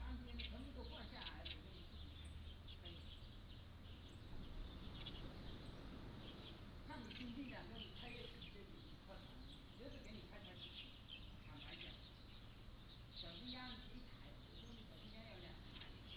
{"title": "津沙村, Nangan Township - Small village", "date": "2014-10-14 12:54:00", "description": "Small village, Ancient settlement, Birdsong, Traffic Sound, Sound of the waves", "latitude": "26.15", "longitude": "119.91", "altitude": "16", "timezone": "Asia/Taipei"}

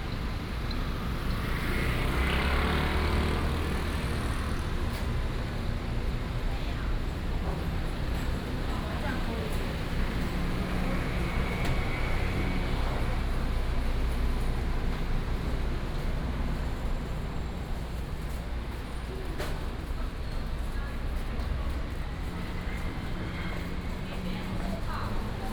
{"title": "Yingshi Rd., Banqiao Dist., New Taipei City - Walking on the road", "date": "2015-07-29 15:56:00", "description": "Walking on the road, Traffic Sound", "latitude": "25.02", "longitude": "121.46", "altitude": "20", "timezone": "Asia/Taipei"}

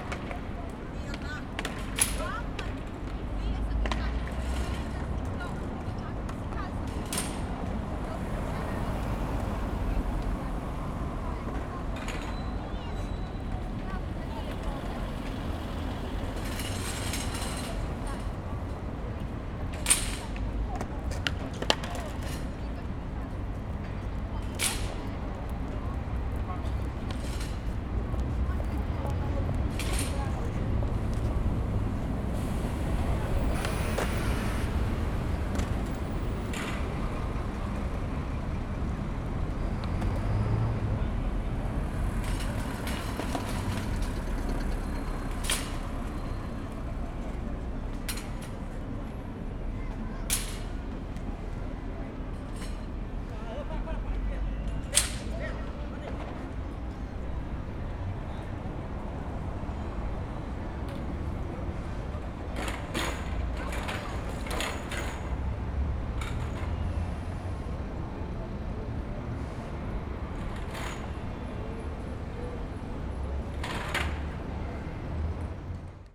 {"title": "Lisbon, Pr Figueira, skaters", "date": "2009-10-15 18:45:00", "description": "skaters, ridding, lisbon, traffic", "latitude": "38.71", "longitude": "-9.14", "altitude": "22", "timezone": "Europe/Lisbon"}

